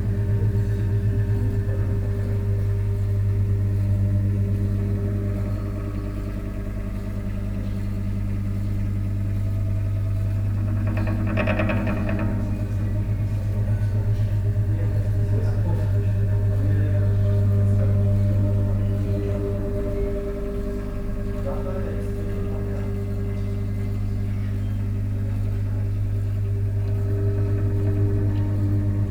Lörick, Düsseldorf, Deutschland - Düsseldorf, Wevelinghoferstr, kybernetic op art objects
The sound of kybernetic op art objects of the private collection of Lutz Dresen. Here no.03 a small box with a moving metal form - here with voices in the background
soundmap nrw - topographic field recordings, social ambiences and art places
Düsseldorf, Germany, April 2015